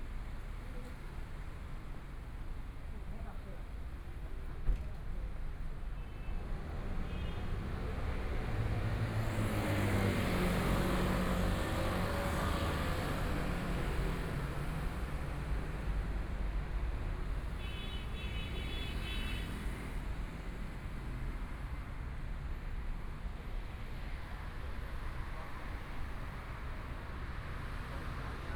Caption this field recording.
Environmental sounds, Walking on the road, Motorcycle sound, Traffic Sound, Binaural recordings, Zoom H4n+ Soundman OKM II